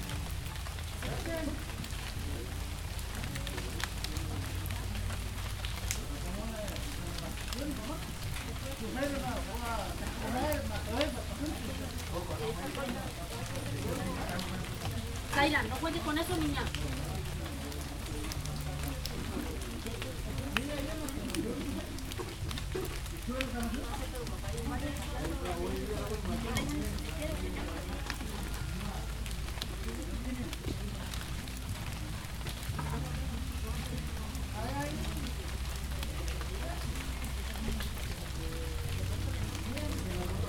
Puerto Gaitán, Meta, Colombia - Wacoyo Casa Jairo Yepes
Audio grabado en la casa del capitan Jairo Yepes en el marco del proyecto piloto de investigación sobre sonoridad y manifestaciones musicales de la etnia Sikuani en el resguardo de Wacoyo. Este proyecto se enmarca en el plan departamental de música del Meta.
Puerto Gaitan, Meta, Colombia, 30 July 2014